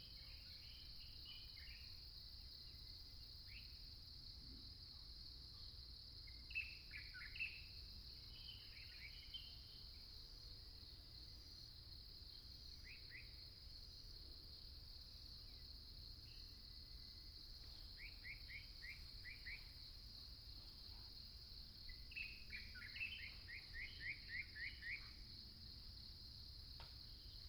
{"title": "玉長公路, Fuli Township - Birdsong", "date": "2014-10-09 07:19:00", "description": "Birdsong, Next to the highway, Traffic Sound", "latitude": "23.27", "longitude": "121.36", "altitude": "393", "timezone": "Asia/Taipei"}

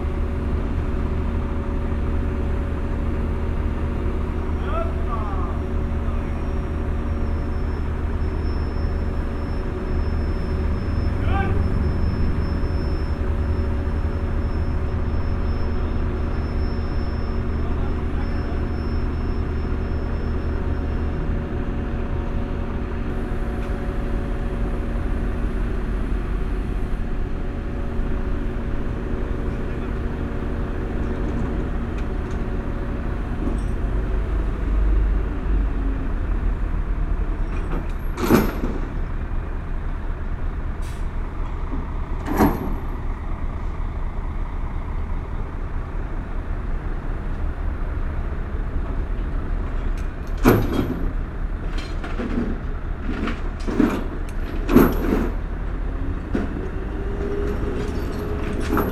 {"title": "Mont-Saint-Guibert, Belgique - Mounting a crane", "date": "2016-09-01 17:30:00", "description": "Workers are mounting a big crane with a gigantic Megamax mobile crane. After one minute, there's a big problem and the boss is shouting on the other workers.", "latitude": "50.64", "longitude": "4.60", "altitude": "81", "timezone": "Europe/Brussels"}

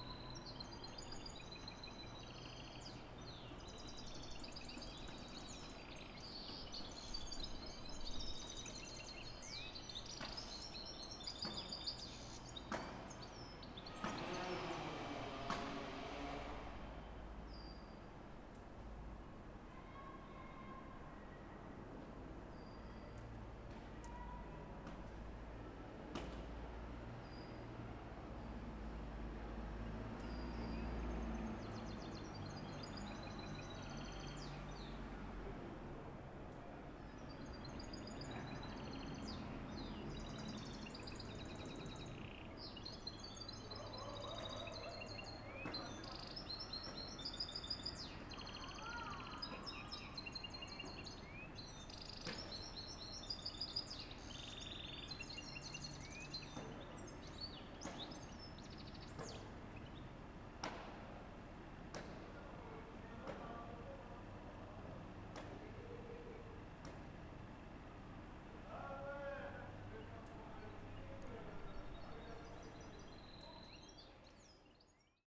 {"title": "Impasse Saint-Jean, Saint-Denis, France - Basketball Net at Impasse St Jean", "date": "2019-05-27 12:10:00", "description": "This recording is one of a series of recording mapping the changing soundscape of Saint-Denis (Recorded with the internal microphones of a Tascam DR-40).", "latitude": "48.94", "longitude": "2.36", "altitude": "33", "timezone": "Europe/Paris"}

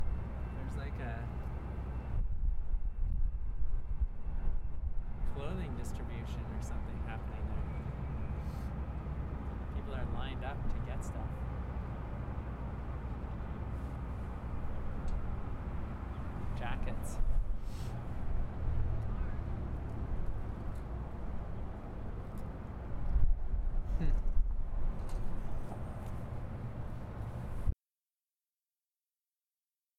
{"title": "East Village, Calgary, AB, Canada - clothing distribution", "date": "2012-04-15 12:21:00", "description": "This is my Village\nTomas Jonsson", "latitude": "51.05", "longitude": "-114.05", "altitude": "1047", "timezone": "America/Edmonton"}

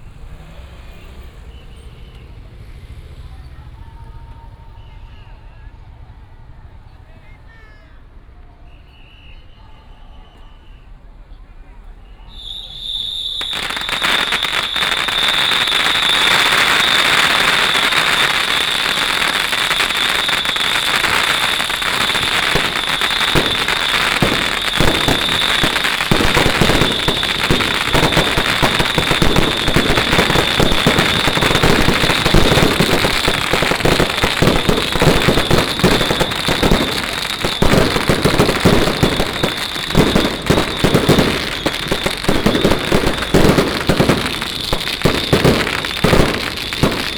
{"title": "Dingnan, Huwei Township - Firecrackers and fireworks sound", "date": "2017-03-03 11:25:00", "description": "Firecrackers and fireworks sound, whistle, Matsu Pilgrimage Procession", "latitude": "23.69", "longitude": "120.42", "altitude": "22", "timezone": "GMT+1"}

